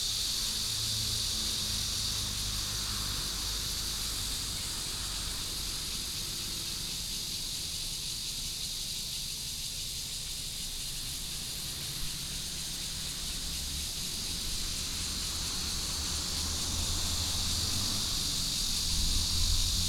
篤行公園, Zhongli Dist., Taoyuan City - Cicadas and Birds
Cicadas and Birds sound, Traffic sound, in the Park